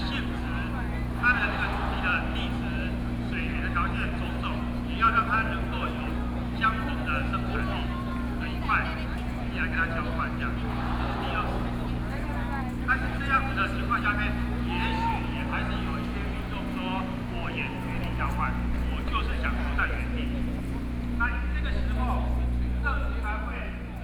Ministry of the Interior, Taipei City - Nonviolent occupation
Nonviolent occupation, Zoom H4n+ Soundman OKM II